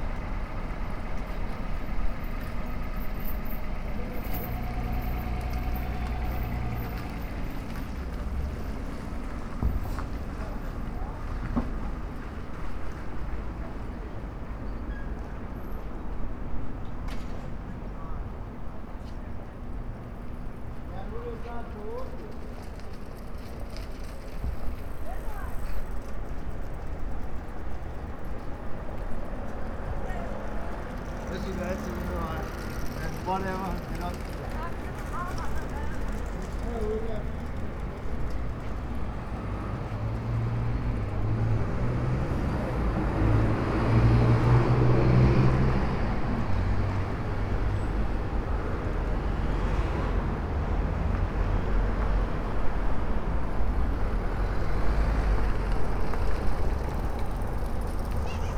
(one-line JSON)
{"title": "Frederiksholms Kanal, København, Denmark - Pedestrians along canal", "date": "2017-03-22 16:47:00", "description": "Pedestrians and cyclists. City murmur.\nPiétons et cylistes. Rumeur de la ville.", "latitude": "55.68", "longitude": "12.58", "altitude": "3", "timezone": "Europe/Copenhagen"}